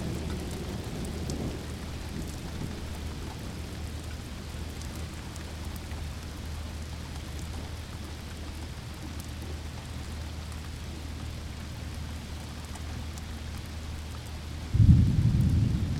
Thunderstorm, Antwerpen
Thunderstorm in the harbour of Antwerp, Belgium. Zoom H2.
Antwerp, Belgium, 10 July 2010